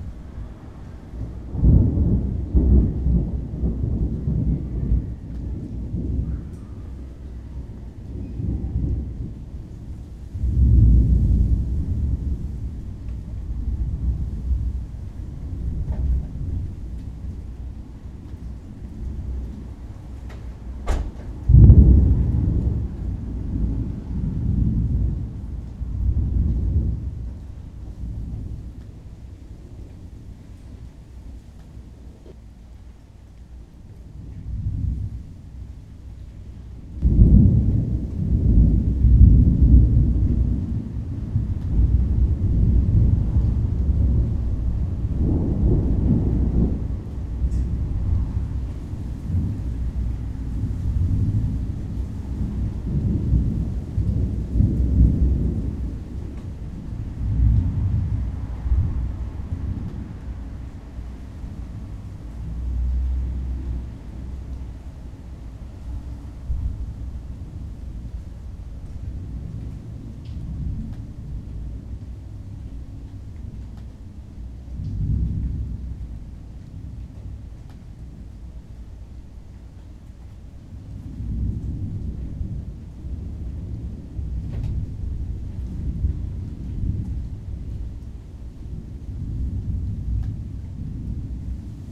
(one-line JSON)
{"title": "takasaki, kaminakai, thunderstorm", "date": "2010-07-26 09:22:00", "description": "a thunderstorm coming up on a hot summers day afternoon. mild wind cooling down the heat a bit.\ninternational city scapes - social ambiences and topographic field recordings", "latitude": "36.31", "longitude": "139.03", "altitude": "90", "timezone": "Asia/Tokyo"}